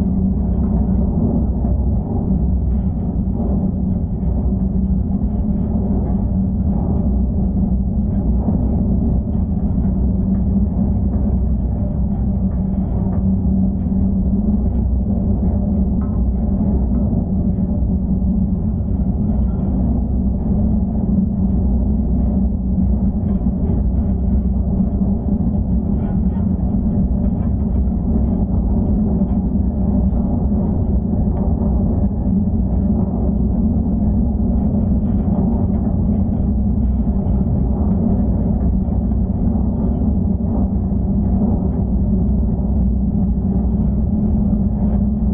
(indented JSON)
{
  "title": "South Beach, South Haven, Michigan, USA - South Beach Flag Tower",
  "date": "2022-07-20 08:44:00",
  "description": "Geophone recording from one of the legs of a steel flag tower at South Beach. Very windy morning.",
  "latitude": "42.40",
  "longitude": "-86.28",
  "altitude": "176",
  "timezone": "America/Detroit"
}